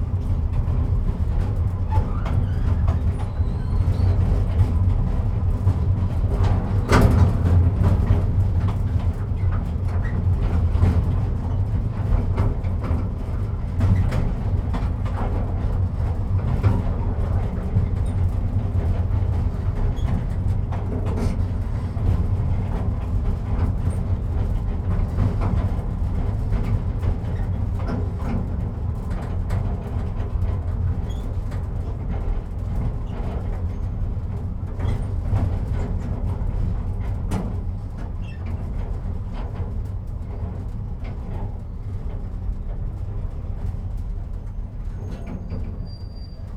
Anykščiai, Lithuania, in a train
tourist train stops at the crossroads